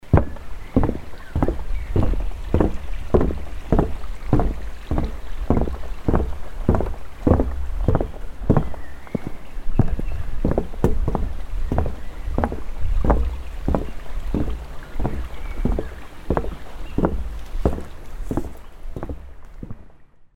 hoscheid, wooden bridge
Walking on a wooden bridge that crosses a small stream
Hoscheid, Holzbrücke
Auf einer Holzbrücke, die einen kleinen Fluss überquert.
Hoscheid, pont de bois
Sur un pont de bois qui traverse un petit ruisseau.
Projekt - Klangraum Our - topographic field recordings, sound objects and social ambiences